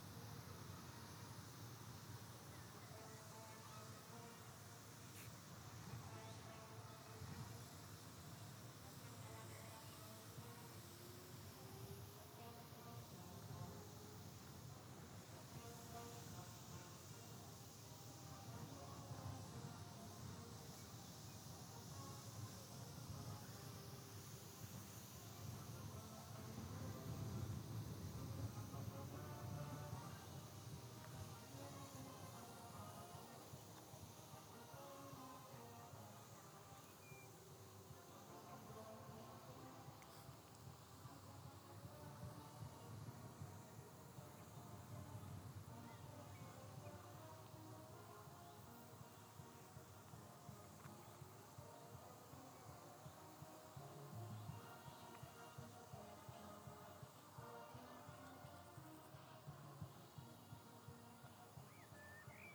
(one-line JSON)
{"title": "brass band Bronkhorst, Netherlands - Harmonie", "date": "2018-07-08 15:45:00", "description": "Brass band in distance. Soundfield mic, stereo decode\nRecording made for the project \"Over de grens - de overkant\" by BMB con. featuring Wineke van Muiswinkel.", "latitude": "52.08", "longitude": "6.17", "altitude": "5", "timezone": "Europe/Amsterdam"}